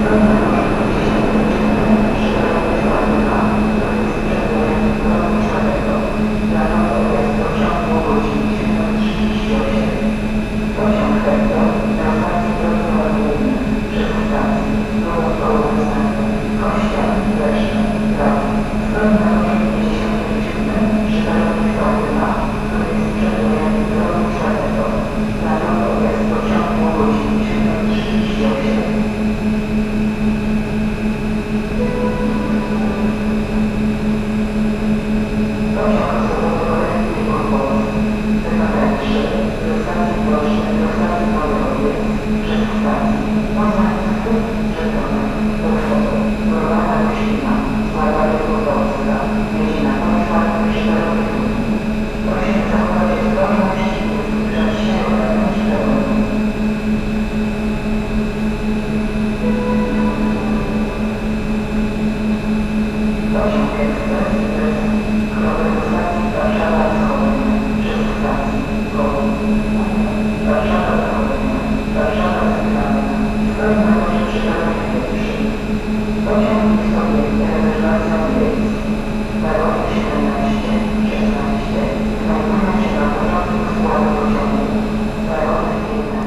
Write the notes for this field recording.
recorded on a platform of "summer station". local train idling. there is nobody on the platform to listen to the announcements about trains on different platforms. (roland -r07)